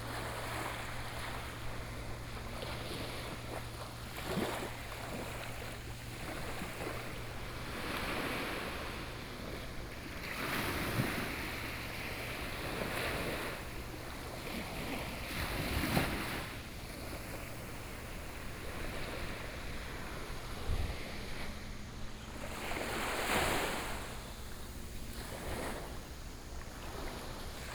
鹽寮漁港, Shoufeng Township - In the small fishing port
In the small fishing port, Sound of the waves
Shoufeng Township, 花東海岸公路54號